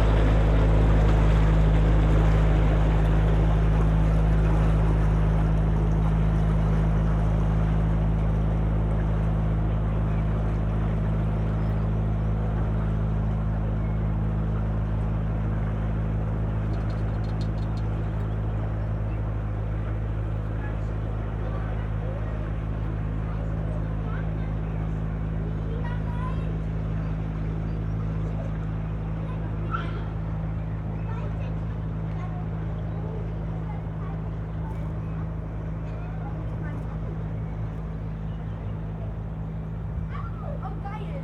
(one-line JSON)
{"title": "Spreeschloßstr., Oberschöneweide, Berlin - BVG ferry boat, jetty", "date": "2012-04-22 16:35:00", "description": "the BVG ferry boat crosses the river Spree here each 20min., sunny sunday afternoon, many people with bikes.\n(tech note: SD702, Audio Technica BP24025)", "latitude": "52.47", "longitude": "13.50", "altitude": "36", "timezone": "Europe/Berlin"}